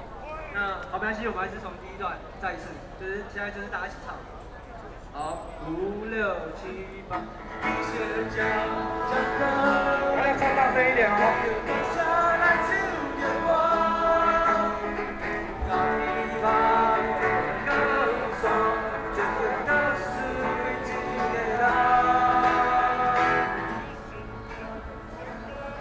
Qingdao E. Rd., Taipei City - Student activism
Student activism, Rock Band songs for the student activism, Students and the public to participate live recordings, People and students occupied the Legislative Yuan
Zoom H6+Rode NT4
Zhongzheng District, Taipei City, Taiwan, 27 March 2014